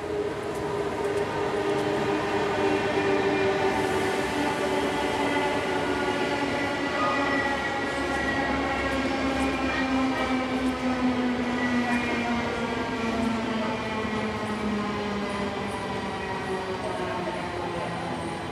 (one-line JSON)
{"title": "Gare Cornavin, Quai, Place de Cornavin, Genève, Suisse - Cornavin Station", "date": "2021-01-28 13:43:00", "description": "Quai 1 de la Gare Cornavin. Période de semi confnement Covid19. On entend les voyageurs, l’escalateur, des femmes qui discutent en mangeant un sandwich, les annonces de la gare, un train qui entre en gare voie 1.\nPlatform 1 of the Cornavin train station. Covid19 semi-confinement period. We can hear travelers, the escalator, women chatting while eating a sandwich, announcements from the station, a train entering station track 1.\nRec Zoom H2n M+S - proceed.", "latitude": "46.21", "longitude": "6.14", "altitude": "390", "timezone": "Europe/Zurich"}